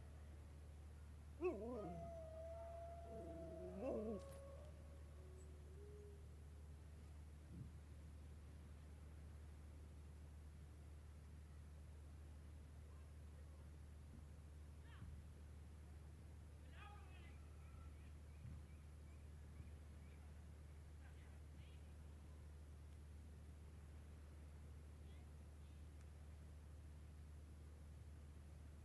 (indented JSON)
{
  "title": "Niaqornat, Grønland - Bygdelyde",
  "date": "2013-06-18 22:15:00",
  "description": "The ambiance and dog sounds of the small village Niaqornat in the late evening. Recorded with a Zoom Q3HD with Dead Kitten wind shield.",
  "latitude": "70.79",
  "longitude": "-53.66",
  "altitude": "18",
  "timezone": "America/Godthab"
}